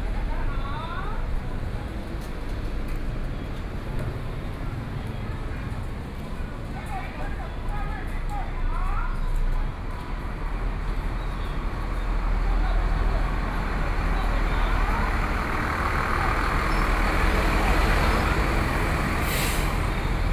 Jianguo Rd., Jungli City, Taoyuan County - Selling sound
Selling sound, Traffic noise, Sony PCM D50 + Soundman OKM II